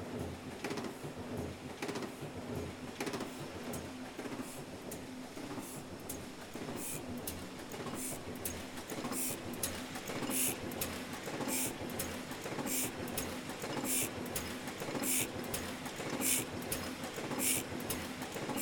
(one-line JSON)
{"title": "Williams Press, Maidenhead, Windsor and Maidenhead, UK - Old Litho Press", "date": "2014-10-02 14:23:00", "description": "This is the sound of an old printing press at Williams Press Ltd., Berkshire. It is an old type of Litho press with large clunky metal parts, and dates from somewhere between early - mid twentieth century.", "latitude": "51.53", "longitude": "-0.73", "altitude": "30", "timezone": "Europe/London"}